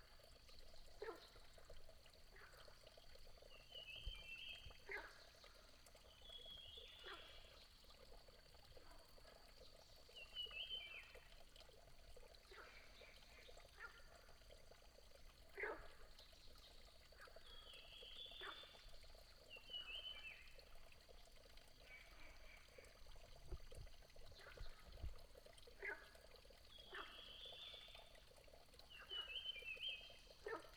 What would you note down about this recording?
Frogs chirping, Bird sounds, Small water